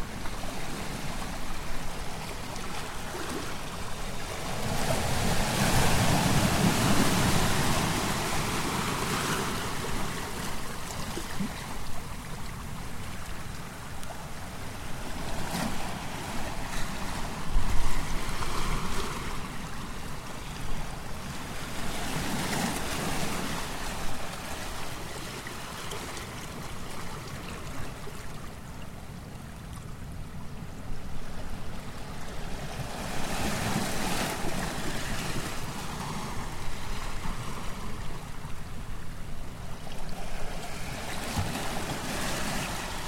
Olympic National Park, Washington, Beach Headlands - Waves over Tidepool Rocks, Headlands North of Third Beach
Olympic National Park 3rd Beach - Waves over Tidepool Rocks